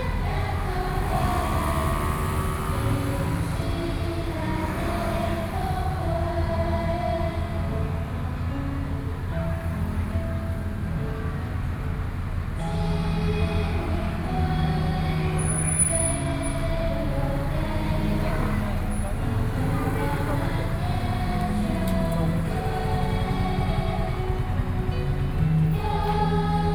Beitou, Taipei - Graduation
Elementary School Graduation, Sony PCM D50 + Soundman OKM II